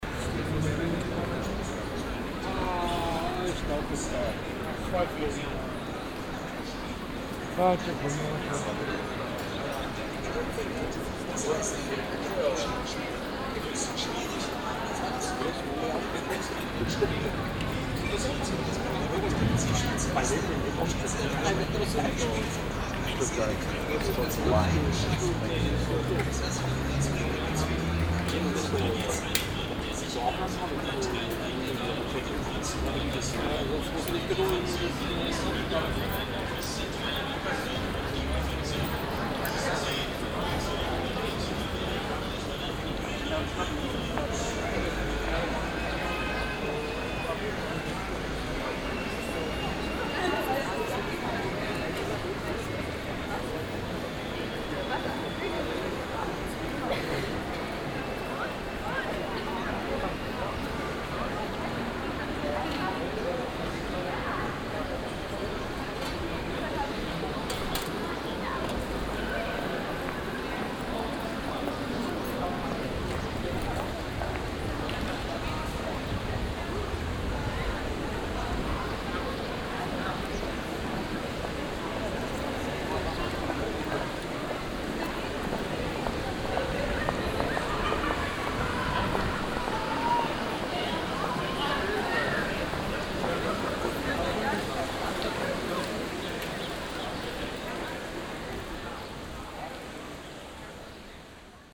stuttgart, königstr, shopping zone
walking in the shopping zone in the aearly evening, passengers and the sound of tvs broadcasting the soccer masters
soundmap d - social ambiences and topographic field recordings